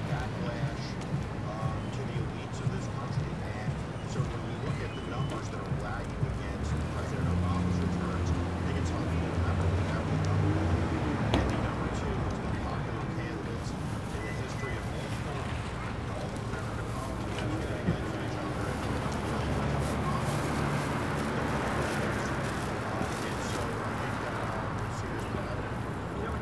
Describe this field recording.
Election Night in Brooklyn. Zoom H4n